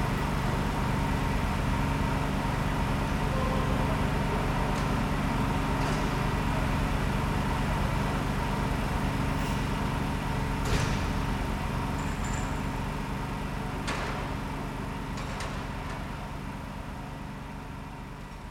Rue Irene Joliot Curie, Colombelles, France - Grand Halle Travaux
Workers in the "Grande Halle", Machines noises, Colombelles, France, Zoom H6